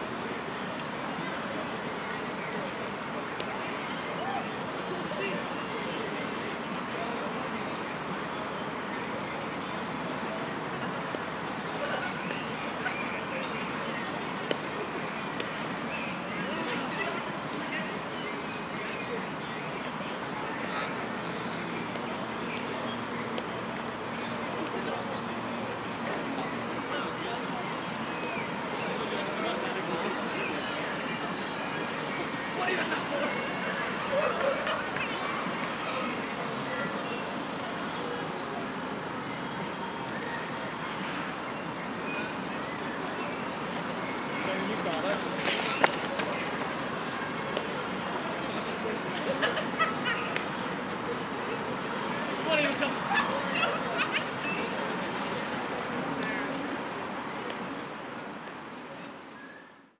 College Gardens, Belfast, UK - Botanic Gardens
Recording of a dog running around me tries to get their toy, passer-by’s chatting, various birds at different distances, someone playing music from their portable speaker, joggers, and groups of people playing sports in the open fields.